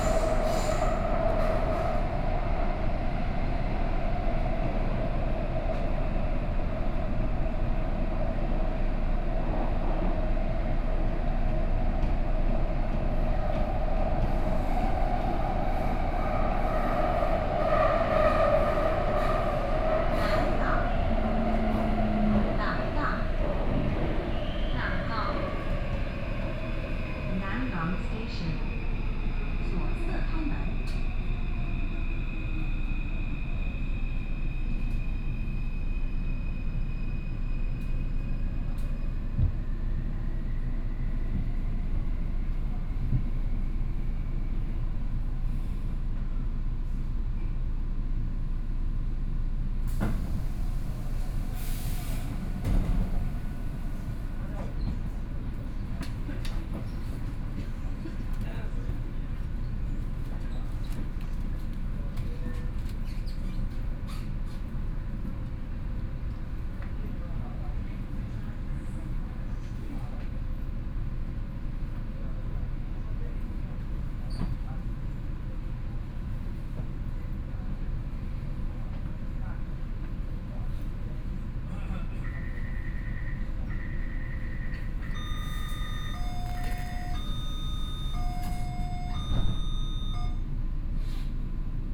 Nangang, Taipei - Blue Line (Taipei Metro)
from Nangang Exhibition Center station to Yongchun, Binaural recordings, Sony PCM D50 + Soundman OKM II
Taipei City, Taiwan, 31 October, 17:16